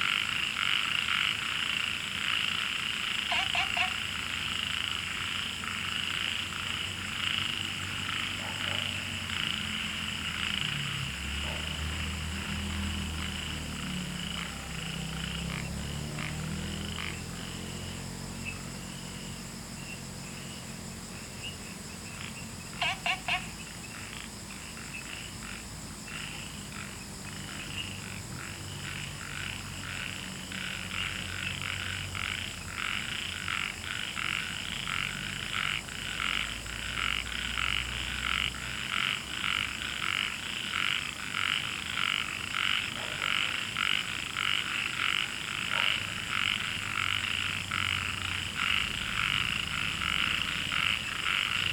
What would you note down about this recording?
Frogs chirping, Wetland, Zoom H2n MS+XY